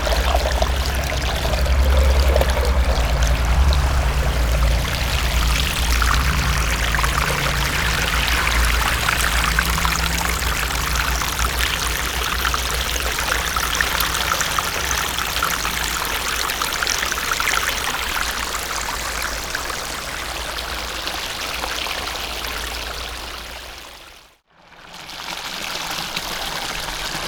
南雅奇岩, Ruifang District, New Taipei City - Stream water sound